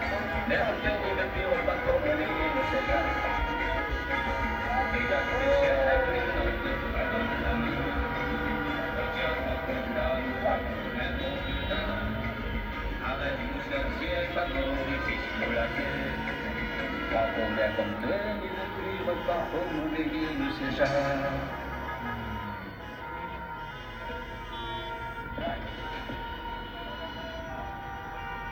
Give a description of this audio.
Cortèges de voitures pour soutenir un candidat aux municipales CILAOS